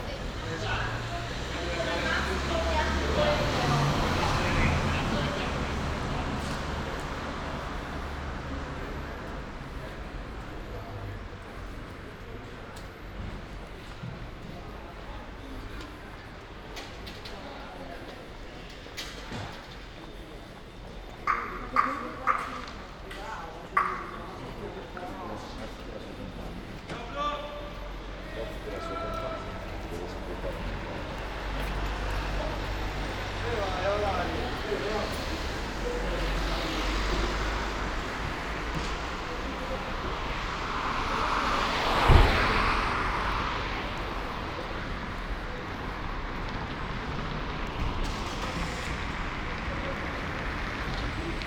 Ascolto il tuo cuore, città. I listen to your heart, city. Chapter LXXXV - Round Midnight on the road again in the time of COVID19: soundscape.
"Round Midnight on the road again in the time of COVID19": soundscape.
Chapter CLXXV of Ascolto il tuo cuore, città. I listen to your heart, city
Friday, June 10th, 2021. The third night of new disposition for curfew at midnight in the movida district of San Salvario, Turin. More than one year and two months after emergency disposition due to the epidemic of COVID19.
Start at 11:48 p.m. end at 00:18 a.m. duration of recording 30’22”
The entire path is associated with a synchronized GPS track recorded in the (kmz, kml, gpx) files downloadable here:
Piemonte, Italia, 10 June 2021